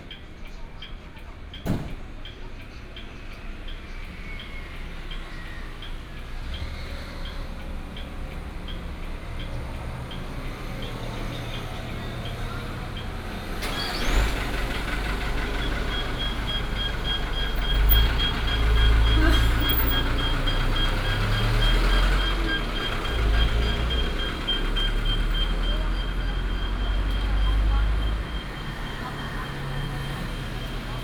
Huazong Rd., Xuejia Dist., Tainan City - Outside the convenience store
Outside the convenience store, Traffic sound, discharge
Binaural recordings, Sony PCM D100+ Soundman OKM II